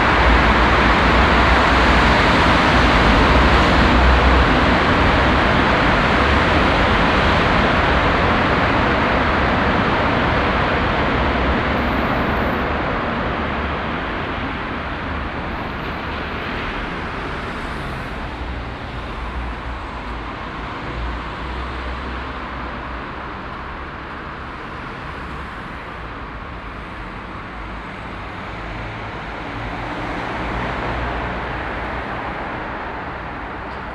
An einer Strassenunterführung für den Stadtverkehr, der hier domartig geöffnet ist. Der Klang der vorbeiziehenden Fahrzeuge.
At a city traffic underpass that has here a domlike opening. The sound of the passing vehicles.
Projekt - Stadtklang//: Hörorte - topographic field recordings and social ambiences
Essen, Germany, 29 April 2014